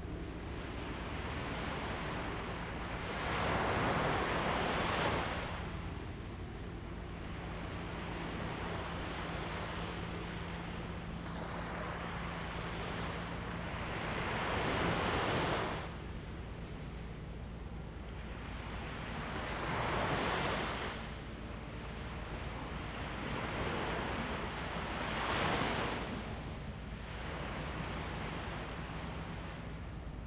Penghu County, Taiwan - Sound of the waves
Sound of the waves
Zoom H2n MS +XY